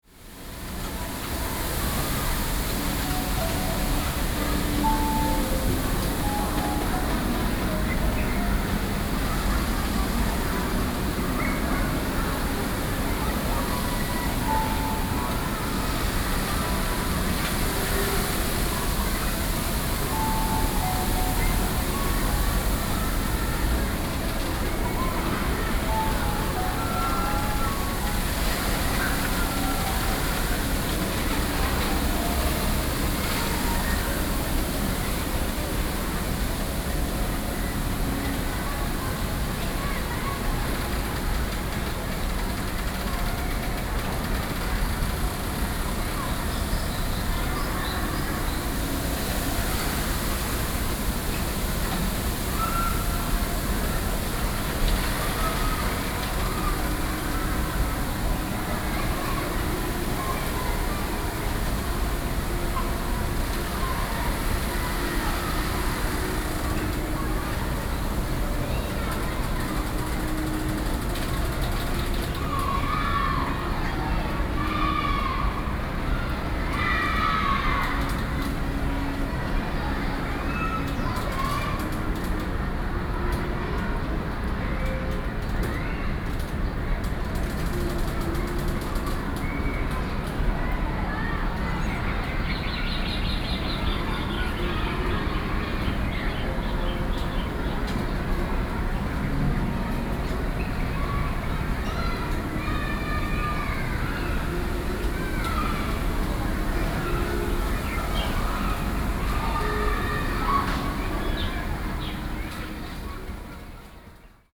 Xindian, New Taipei City - School broadcasting
In the Park, Distant sound of school, Zoom H4n+ Soundman OKM II